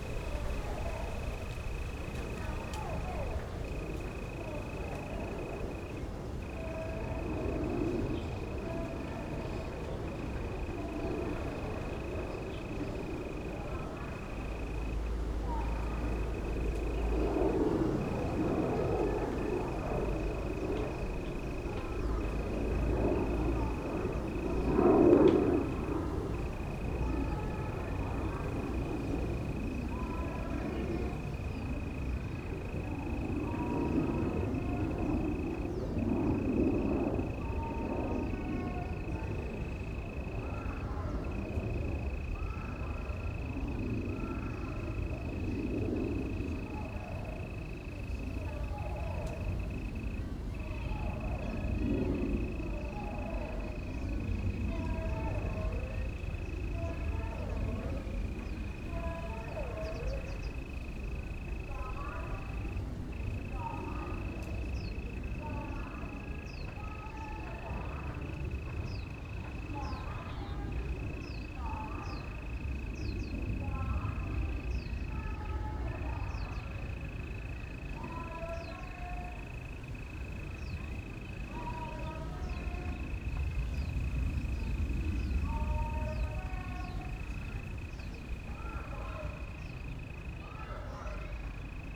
Nangang Park, Taipei - Nangang Park
The park's natural sound, Distant sound truck broadcasting, Aircraft flying through, Rode NT4+Zoom H4n